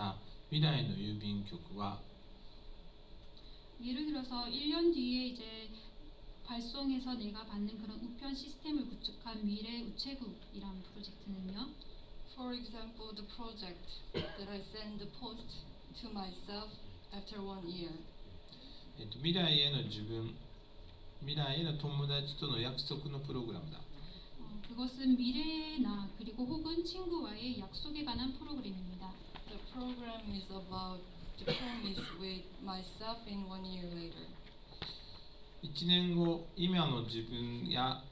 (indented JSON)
{
  "title": "Organization of Gimhae Arts and Sports Center, Korea - Speech",
  "date": "2014-12-17 14:22:00",
  "description": "Speech, Artists Forum",
  "latitude": "35.24",
  "longitude": "128.87",
  "altitude": "13",
  "timezone": "Asia/Seoul"
}